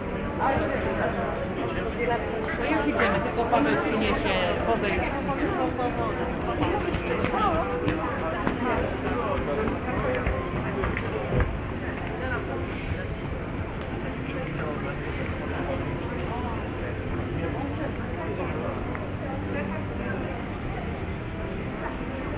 Main Drinking Room at Krynica-Zdrój, Polska - (650 BI) Entering main drinking room
Entering the main drinking room, walking around + static ambience for a while.
Recorded with DPA 4560 on Sound Devices MixPre6 II.
województwo małopolskie, Polska, 25 July 2020, 14:30